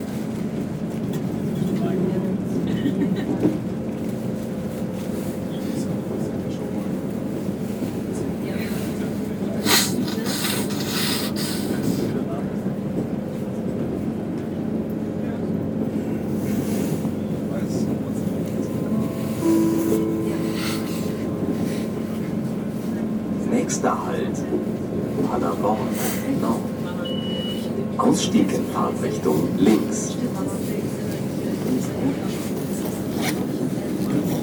Kernstadt, Paderborn, Germany - In the train
Sounds of a train in Paderborn with an announcement of the next stop.
28 February 2013, ~17:00